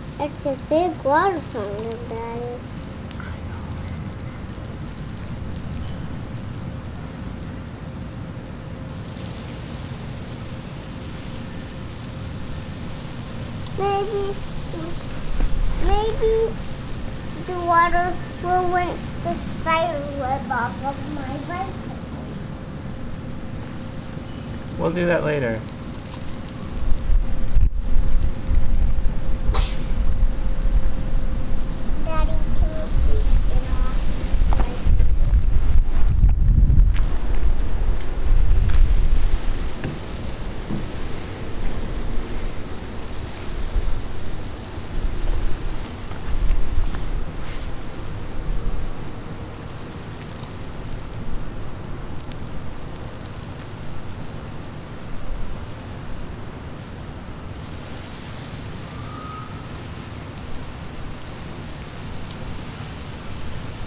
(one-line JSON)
{"title": "Palmer Square, Chicago, IL, USA - Sprinkler in Backyard", "date": "2013-07-18 11:32:00", "description": "Hot, summertime, sprinkler in backyard, dad & 2-1/2 year old girl playing hide & seek... birds, cta train, air conditioner.", "latitude": "41.92", "longitude": "-87.70", "altitude": "182", "timezone": "America/Chicago"}